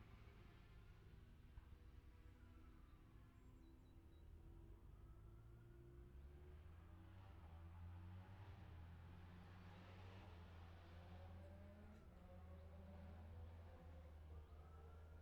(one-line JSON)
{"title": "Scarborough, UK - motorcycle road racing 2017 ... lightweights ...", "date": "2017-04-22 10:49:00", "description": "Ultra lightweight practice ... 125 ... 250 ... 400 ... two strokes / four strokes ... Bob Smith Spring Cup ... Olivers Mount ... Scarborough ... open lavalier mics clipped to sandwich box ...", "latitude": "54.27", "longitude": "-0.41", "altitude": "147", "timezone": "Europe/London"}